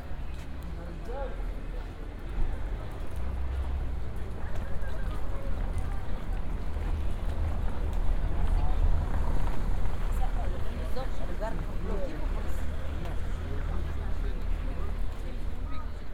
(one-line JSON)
{"title": "Sacré-Cœur, Paris, France - (368 BI) Accordeonist", "date": "2018-09-24 16:13:00", "description": "Binaural recording from Sacré-Cœur surroundings with an accordenonist on a first plan.\nRecorded with Soundman OKM on Sony PCM D100", "latitude": "48.89", "longitude": "2.34", "altitude": "129", "timezone": "Europe/Paris"}